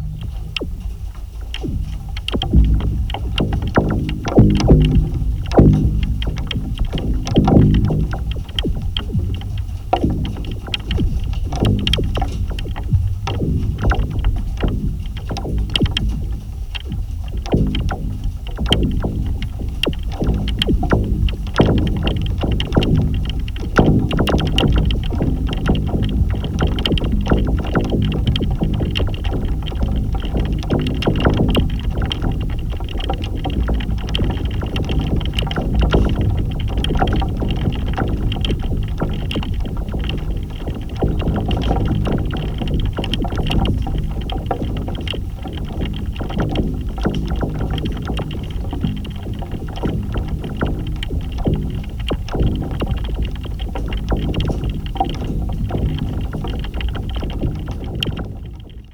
Rain on Wire Fence, Hanley Swan, Worcestershire, UK - Rain on Wire Fence
I imagine every Field Recordist tries their hand at attaching contact mics to a tight wire fence. Here is my version during a heavy summer rainstorm. The location is Wood Street an ancient drovers road.